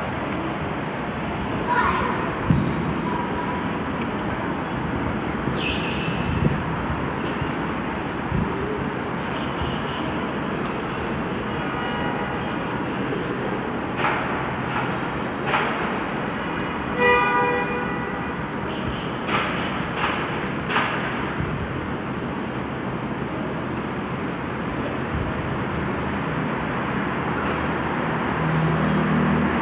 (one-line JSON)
{"title": "Ambient sounds from the Xingfuyicun 8th alleyway (upside the Worker Stadium north Rd) - Ambient sounds from the Xingfuyicun 8th alleyway", "date": "2021-09-21 18:03:00", "description": "This site is mapped and based on the satellite image. You will hear the sounds of the residential area consist of engines ignition, urban construction and some misty ambient voices by the pedestrian.", "latitude": "39.93", "longitude": "116.44", "altitude": "48", "timezone": "Asia/Shanghai"}